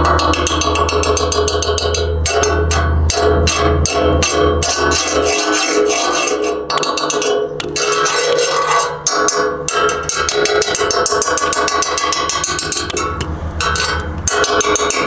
Via Leone Amici, Serra De Conti AN, Italia - tappo di birra
Il suono del tappo di birra fatto cadere ripetutamente, in un piccolo spiazzale che si affaccia alle mura.
Recorded with SONY IC RECORDER ICD-PX440
26 May 2018, 15:25